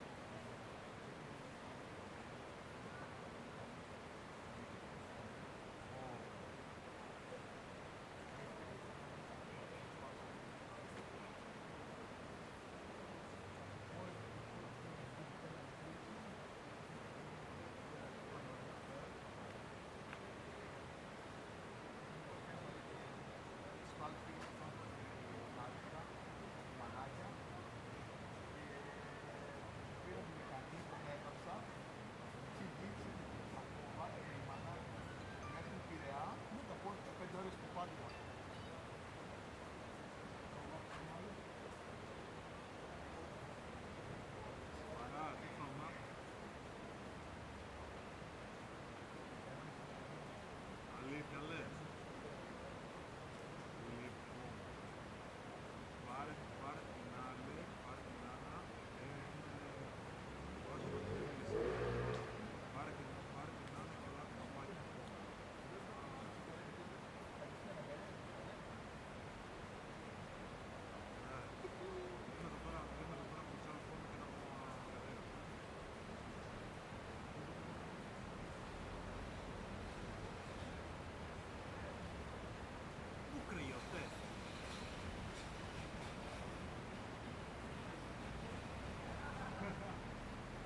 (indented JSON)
{
  "title": "Τα Παπάκια, Πινδάρου, Ξάνθη, Ελλάδα - Park Nisaki/ Πάρκο Νησάκι- 21:00",
  "date": "2020-05-12 21:00:00",
  "description": "River flow, men discussing, people talking distant, cellphone ringing, light traffic.",
  "latitude": "41.14",
  "longitude": "24.89",
  "altitude": "84",
  "timezone": "Europe/Athens"
}